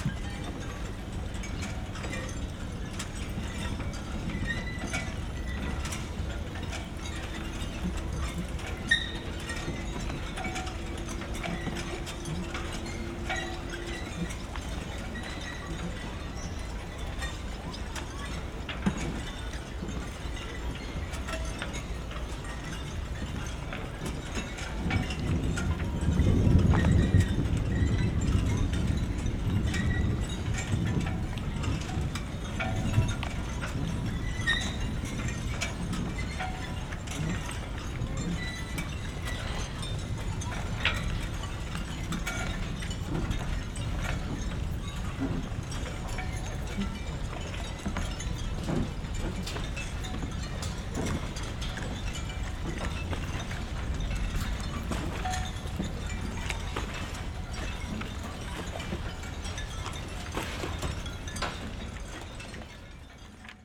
Wannsee, Berlin - marina, boats
Wannsee Berlin, marina, sailing boats, ringing rigs, a thunderstorm is approaching
(SD702, Audio Technica BP4025)